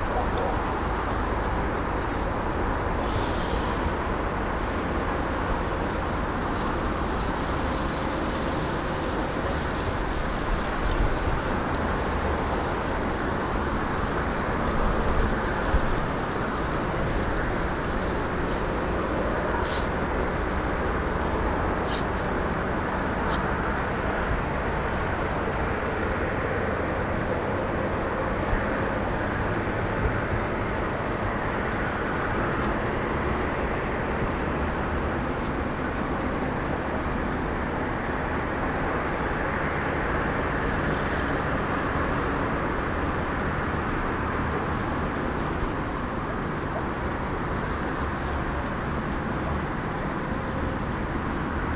вул. Шмідта, Костянтинівка, Украина - Шум камышей
Тихая река, шумит дорога на противоположном берегу. Ветер. Шелест камышей и пение птиц вдалеке